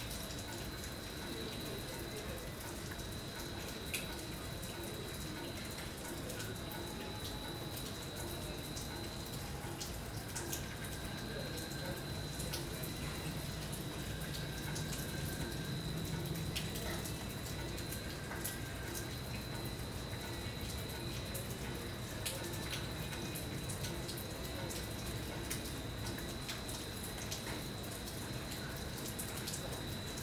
soundscapes of the rainy season in Lusaka...